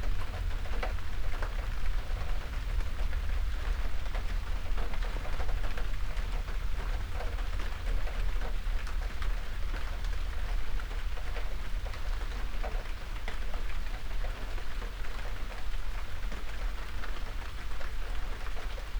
Recordings in the Garage, Malvern, Worcestershire, UK - Rain Jet Mouse
At 3am. a high jet passes as light rain begins. A few paces away the mouse trap in the shed is triggered. The jet continues and the rain falls.
Recorded overnight inside the open garage with a MixPre 6 II and 2 x Sennheiser MKH 8020s
England, United Kingdom, 28 July, 03:02